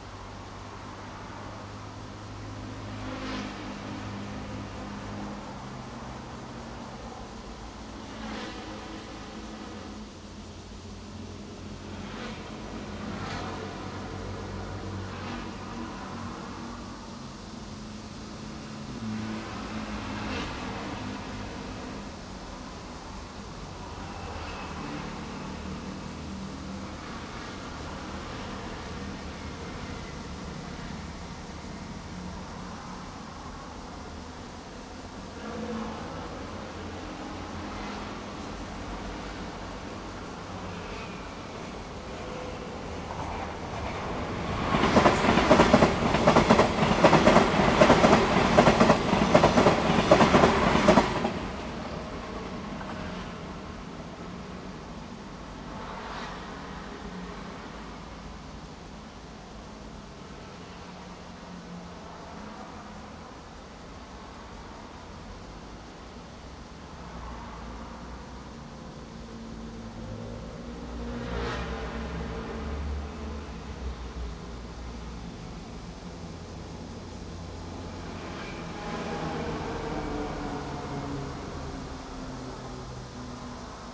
環南路二段1號, Pingzhen Dist., Taoyuan City - Next to the rails
Next to the rails, Cicada cry, Traffic sound, The train runs through
Zoom H2n MS+XY
Taoyuan City, Taiwan, 28 July, 08:34